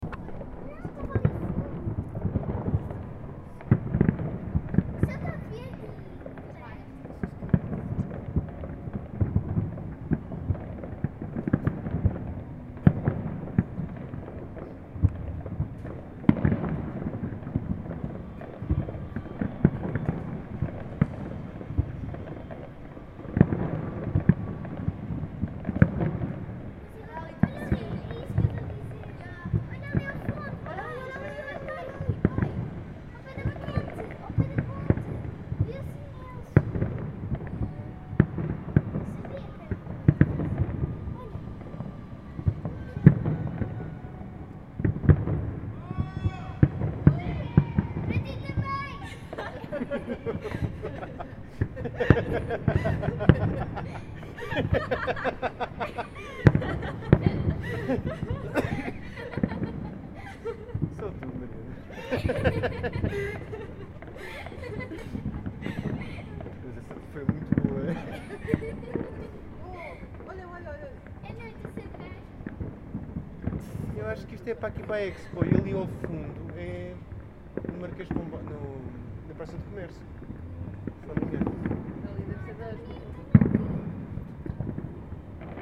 São Jorge de Arroios, Portugal - Fireworks in Lisbon - new year 2014
new year 2014, lisbon, fireworks, people yelling, recorder H4n